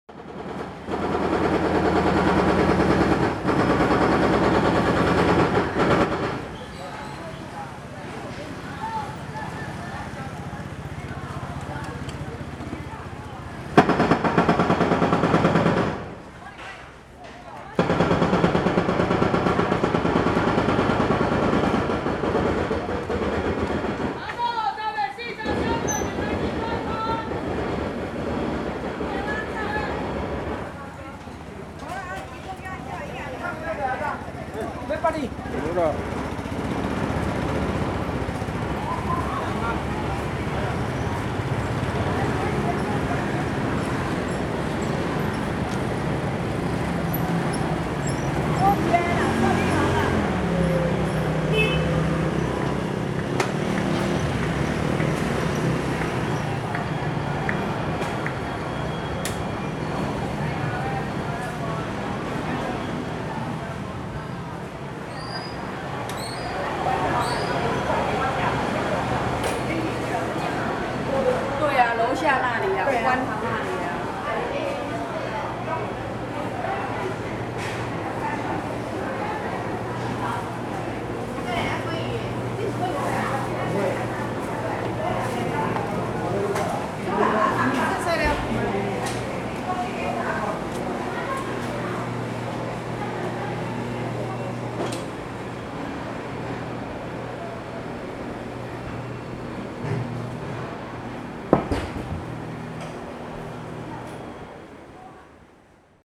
Zhongyang N. Rd., Sanchong Dist., New Taipei City - In the Market
Walking through the traditional market, Construction noise
Sony Hi-MD MZ-RH1 +Sony ECM-MS907